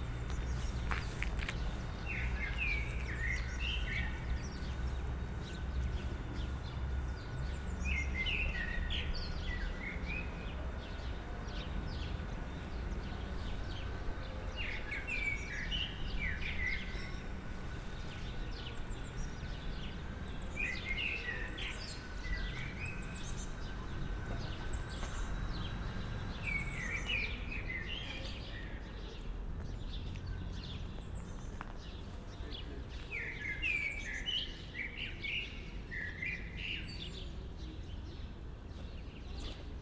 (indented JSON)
{
  "title": "playground, Maybachufer, Berlin, Deutschland - playground ambience",
  "date": "2020-03-25 16:05:00",
  "description": "no kids, no parents...\n(Sony PCM D50 Primo EM172)",
  "latitude": "52.49",
  "longitude": "13.42",
  "altitude": "39",
  "timezone": "Europe/Berlin"
}